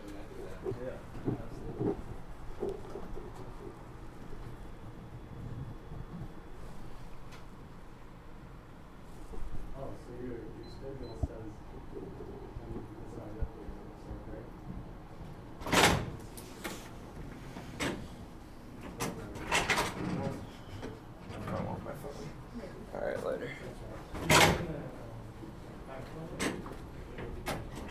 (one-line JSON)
{"title": "University of Colorado Boulder, Regent Drive, Boulder, CO, USA - ARMR201", "date": "2013-02-04 17:20:00", "latitude": "40.01", "longitude": "-105.27", "altitude": "1642", "timezone": "America/Denver"}